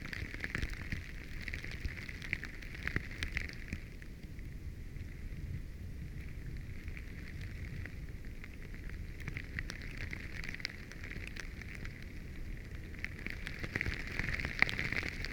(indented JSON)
{
  "title": "lake Alausas, Lithuania, small pieces of ice",
  "date": "2021-04-09 16:25:00",
  "description": "hydrophone just under the moving small pieces of ice on a lake",
  "latitude": "55.63",
  "longitude": "25.71",
  "altitude": "140",
  "timezone": "Europe/Vilnius"
}